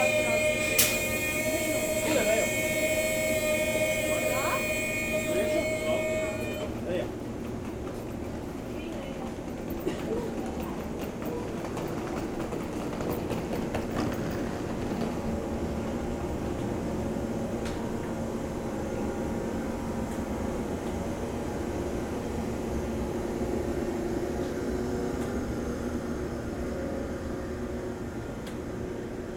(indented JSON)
{
  "title": "Mechelen, Belgique - Mechelen station",
  "date": "2018-10-21 11:04:00",
  "description": "The Mechelen station. In first, a walk in the tunnel, with announcements about a train blocked in Vilvoorde. After on the platforms, a train leaving to Binche, a lot of boy scouts shouting ! At the end, a train leaving to Antwerpen, and suddenly, a big quiet silence on the platforms.",
  "latitude": "51.02",
  "longitude": "4.48",
  "altitude": "17",
  "timezone": "Europe/Brussels"
}